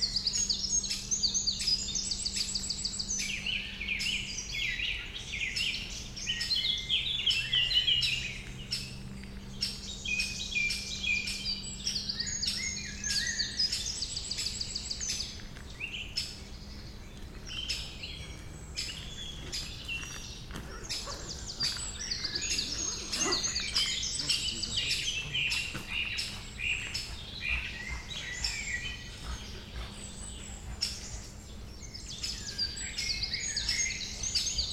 Forêt de Corsuet, Aix-les-bains France - Tempo pic.
Près d'un nid de pic concert d'oiseaux en forêt de Corsuet.